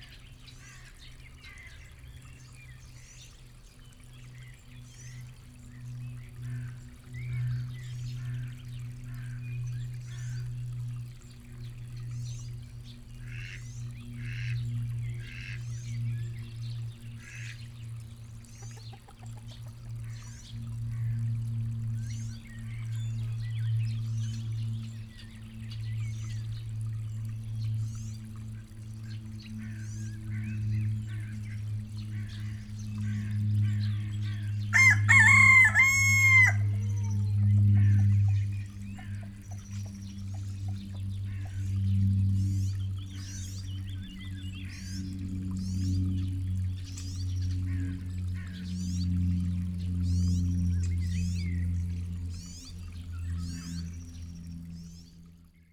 Vinarje, Maribor - village ambience
a cock, his distant colleague, flowing water, a man is testing his chainsaw, then the sound of a plane fills the valley.
(SD702 AT BP4025)
Maribor, Slovenia, 31 May, 10:15